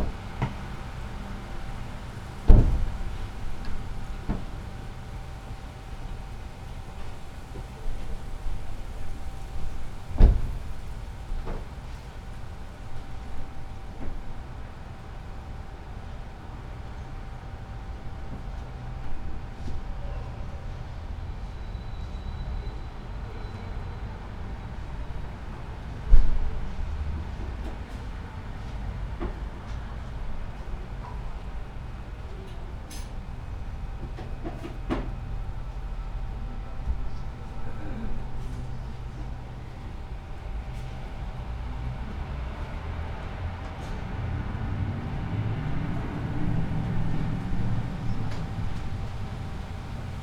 pension Spree, Wilmersdorf, Berlin - night, window
first floor, night streets ambience, trees and wind, passers-by
Sonopoetic paths Berlin
Berlin, Germany, 9 November 2015, 23:40